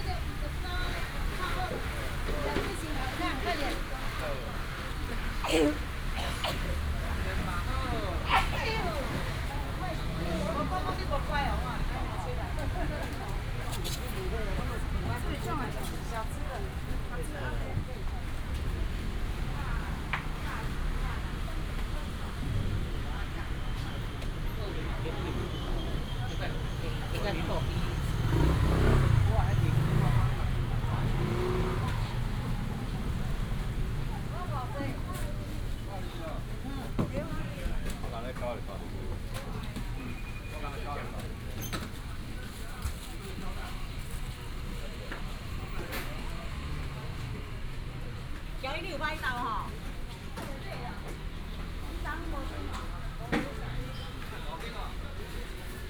台北第一果菜市場, Wanhua Dist., Taipei City - Walking in the wholesale market
Walking in the traditional market, Traffic sound, Vegetables and fruits wholesale market
Taipei City, Taiwan, 6 May 2017